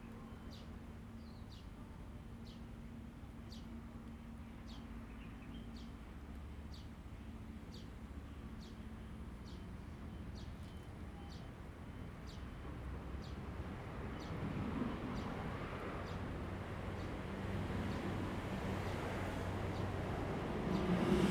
In the fishing port, Traffic Sound, Birdsong, The weather is very hot
Zoom H2n MS +XY